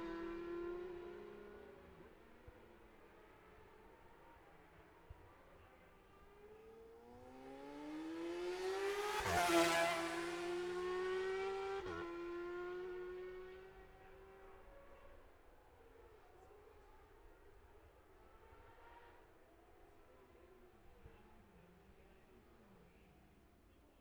bob smith spring cup ... 600cc group A and B qualifying ... dpa 4060s to MixPre3 ...
Scarborough, UK, 22 May 2021